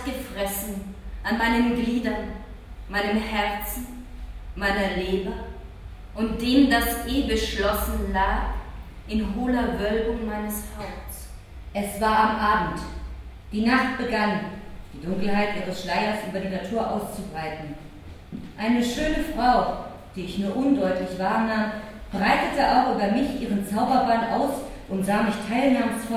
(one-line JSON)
{"title": "Salon Petra - Salon Petra: T.S.Eliot + Lautreamont", "description": "kathrin and monica from salon petra performing Lautreamont", "latitude": "52.49", "longitude": "13.43", "altitude": "48", "timezone": "GMT+1"}